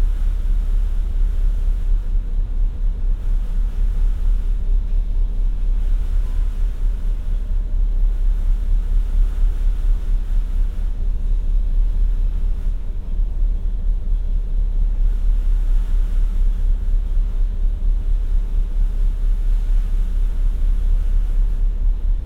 Dover Strait - Pride of Canterbury, aft
Engine drone inside the P&O ferry Pride of Canterbury, about mid-channel from Calais to Dover. Binaural recording with Sennheiser Ambeo headset - use headphones for listening.